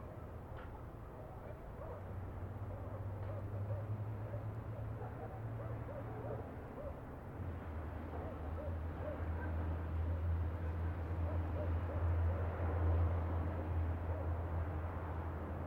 Niebla, Valdivia, Los Ríos, Chili - LCQA AMB NIEBLA FROM TOP EVENING LARGE DOGS REVERB CAR PASSING AIRY MS MKH MATRICED
This is a recording of Niebla from a top hill during evening. I used Sennheiser MS microphones (MKH8050 MKH30) and a Sound Devices 633.
Provincia de Valdivia, Región de Los Ríos, Chile, 24 August 2022, 20:00